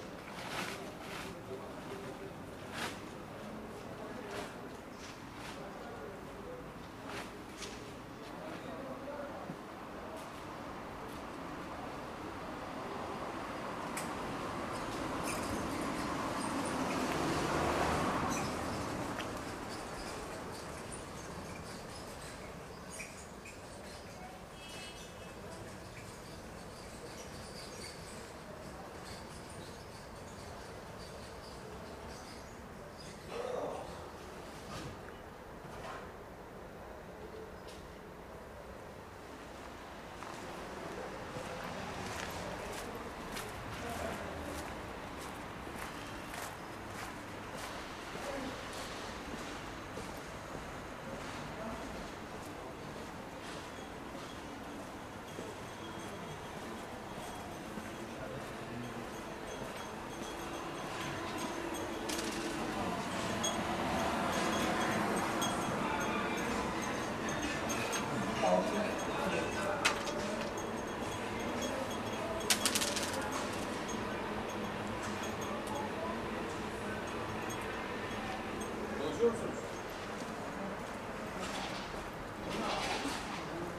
Fullmoon Nachtspaziergang Part IX
Fullmoon on Istanbul, continuing the nightwalk, passing a home delivery courier, road sweepers, a plastic recycler, tea drinkers and backgammon players.